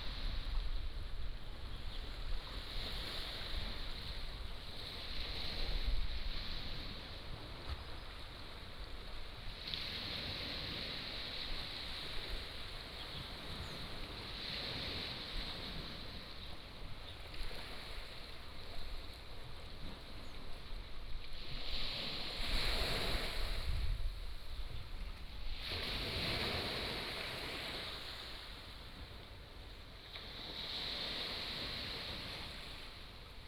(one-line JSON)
{"title": "橋仔村, Beigan Township - Small beach", "date": "2014-10-15 12:58:00", "description": "Small village, Small beach, Sound of the waves, Birds singing", "latitude": "26.23", "longitude": "119.99", "altitude": "17", "timezone": "Asia/Taipei"}